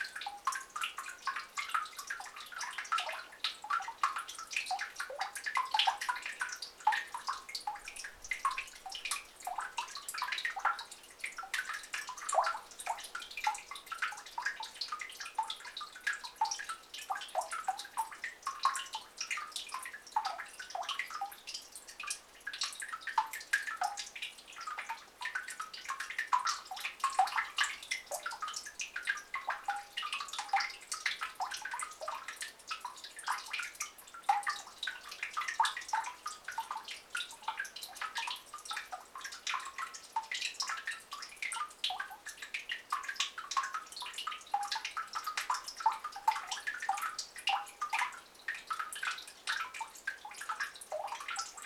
Lithuania, Utena, in the desolate well
some desolate well with stench of dead beaver inside